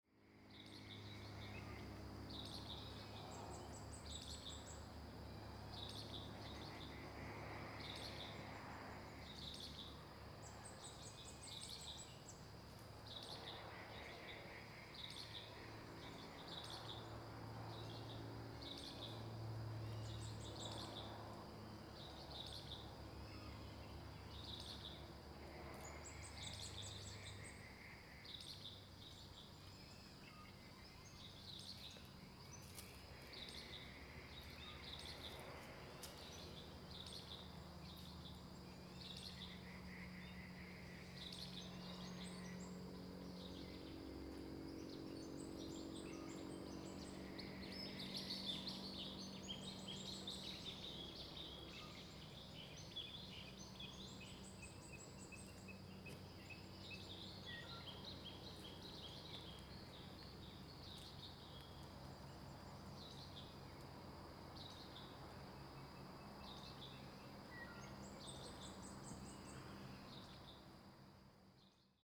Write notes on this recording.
Bird sounds, Traffic Sound, Zoom H2n MS+XY